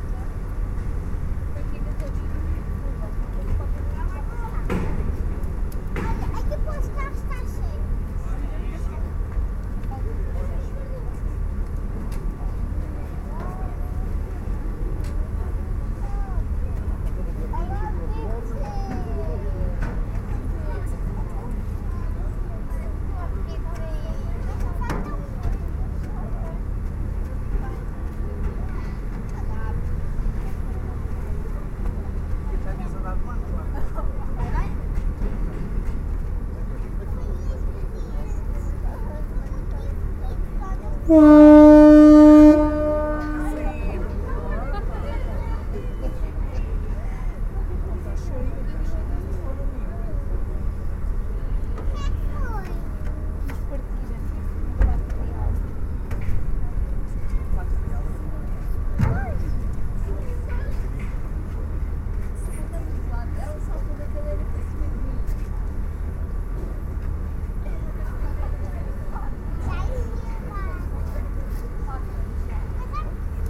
Sètubal, boarding
from Sètubal to Troia, boarding and start